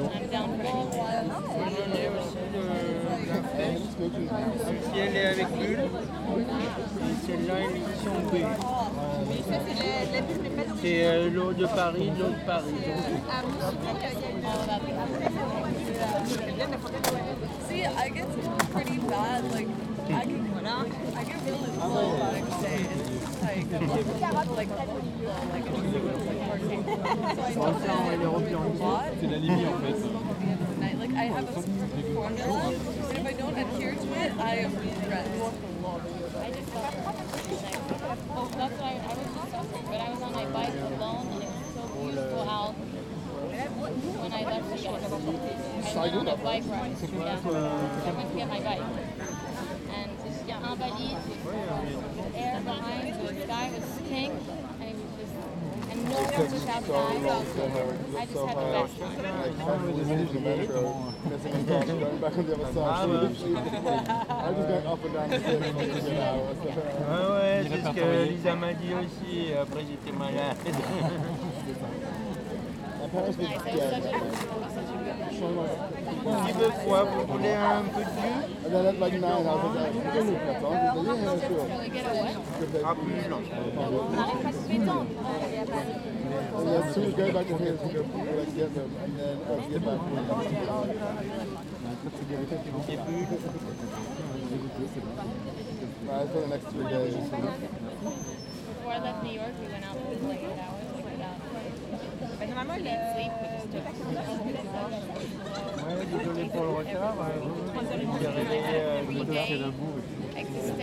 Square du Vert-Galant, Place du Pont Neuf, Paris, Frankrijk - Conversations in the park
General atmosphere and conversations in a very crowded Square Du Vert - Galant, a tiny park on the very edge of Île de la Cité in Parijs.